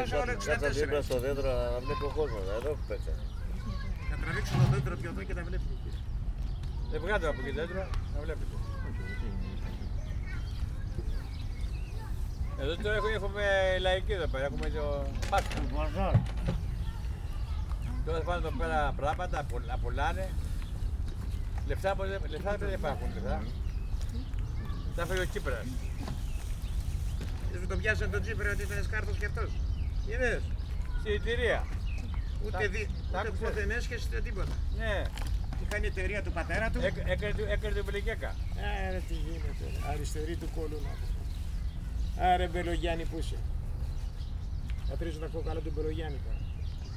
Pedios Areos, park, Athen - men playing backgammon
elderly men talking politics and playing backgammon in the shadow of a tree, on a hot spring day.
(Sony PCm D50, DPA4060)
Athina, Greece, 7 April 2016